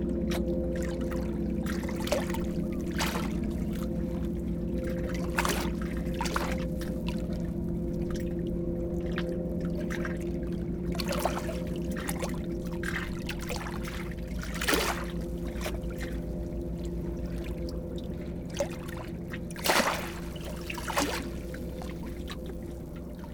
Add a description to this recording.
Simple sound of the sea in Malmö, near the Turning Torso tower.